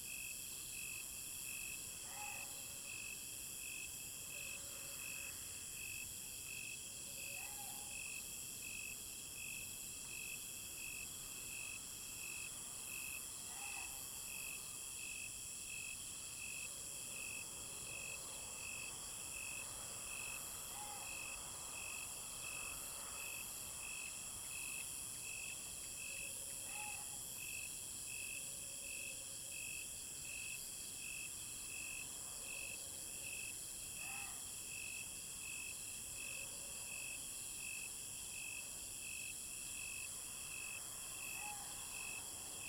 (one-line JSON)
{"title": "介達國小, 金峰鄉正興村, Taitung County - Evening at school", "date": "2018-03-31 22:48:00", "description": "Evening at school, traffic sound, Frog croak, Insect cry, Dog barking\nZoom H2n MS+XY", "latitude": "22.60", "longitude": "121.00", "altitude": "49", "timezone": "Asia/Taipei"}